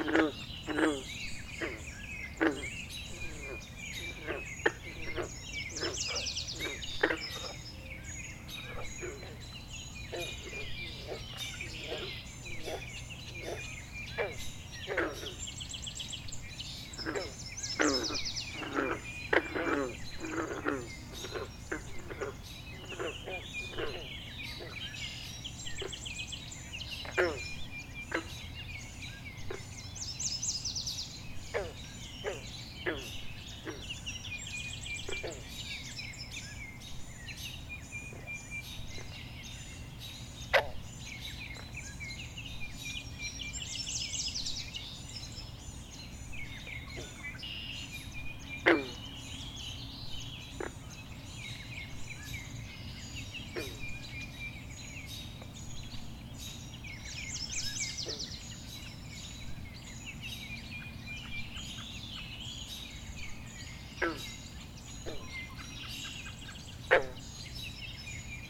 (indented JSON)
{
  "title": "Taylor Creek Trail, Toronto, ON, Canada - Taylor Creek Frogs",
  "date": "2018-05-27 05:15:00",
  "description": "Recorded by a small swampy area on the opposite side of the recreational path next to Massey Creek in the Taylor Creek park system, in East York, Toronto, Ontario, Canada. This is an excerpt from a 75 minute recording of the dawn chorus on this date.",
  "latitude": "43.70",
  "longitude": "-79.31",
  "altitude": "105",
  "timezone": "America/Toronto"
}